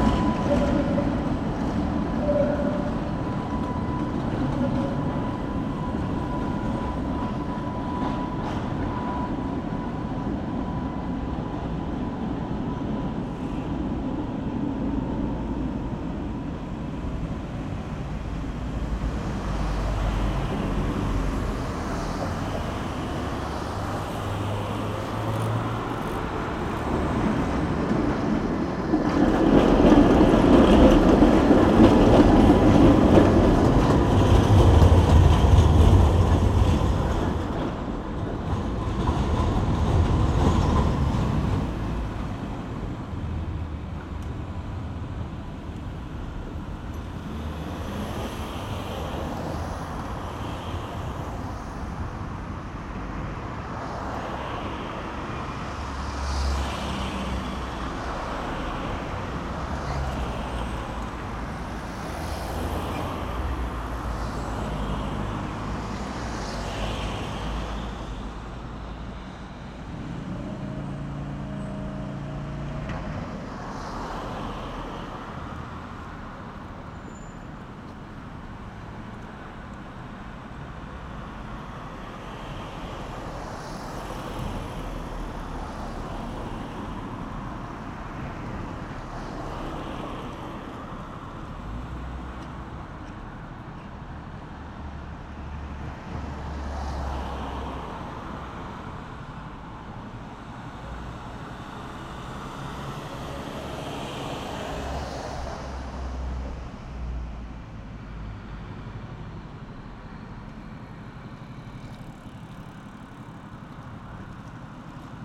leipzig lindenau, demmeringstraße, genau vor dem d21
die kreuzung vor dem d21 in der demmeringstraße um die mittagszeit. autos, straßenbahnen, radfahrer als urbane tongeber.
Leipzig, Deutschland, 1 September 2011